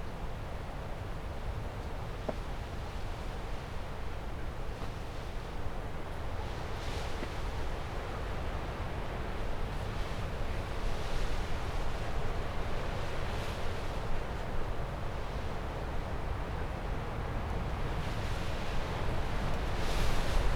Chapel Fields, Helperthorpe, Malton, UK - inside poly tunnel ... outside stormy weather ... binaural ...
inside poly tunnel ... outside stormy weather ... binaural ... Luhd binaural mics in a binaural dummy head ...